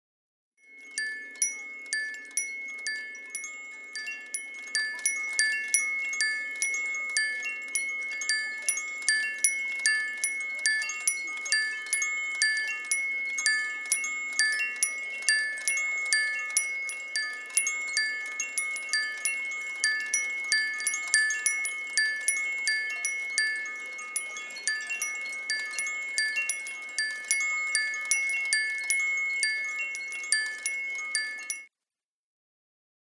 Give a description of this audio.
Aluminium windmills with tuned pentatonic chimes. Close-up. Aluminium windmills with tuned pentatonic chimes. Close-up